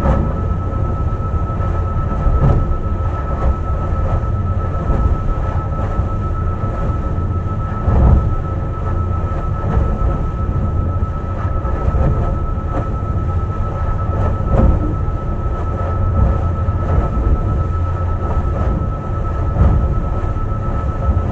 matiatia warf, Auckland
ferry engine and waves crashing on boat.
New Zealand, 1 October, 00:26